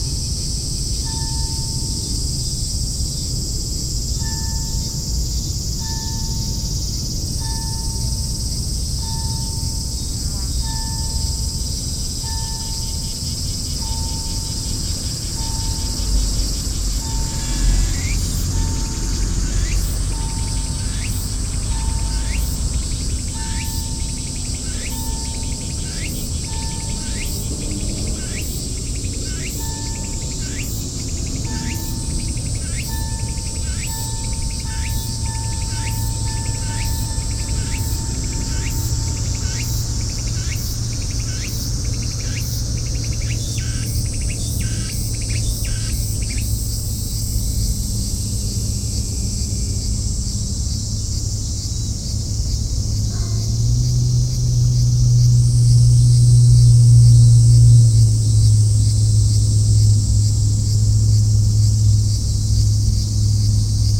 Chomeijicho, Omihachiman, Shiga Prefecture, Japan - Along Biwako near Chomeiji
Boats, personal watercraft, cicadas, and sounds from a nearby small temple a few hundred meters west of Chomeiji Port. Recorded on August 13, 2014 with a Sony M10 recorder, builtin mics facing Lake Biwa.